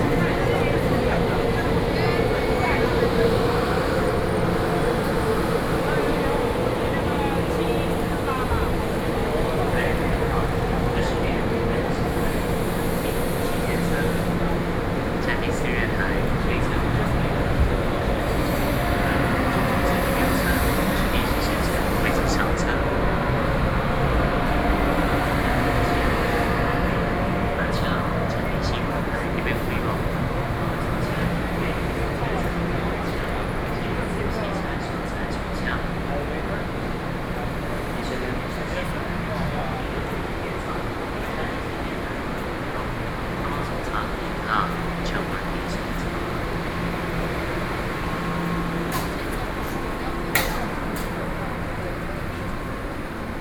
Taipei Station, Taipei city, Taiwan - soundwalk
Taipei City, Zhongzheng District, 台北車站(東三)(下客)